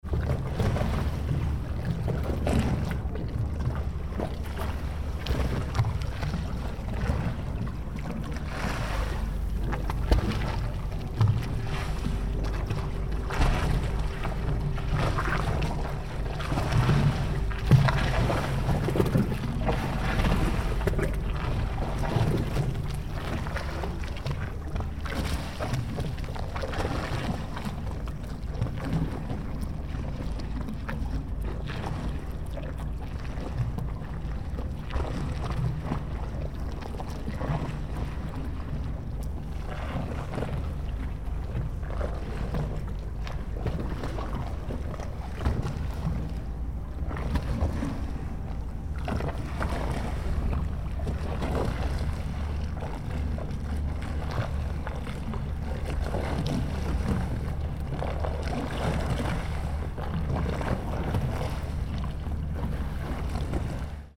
Molo longo, Rijeka, sea
sea splashing between pontoons and mole.
27 December 2009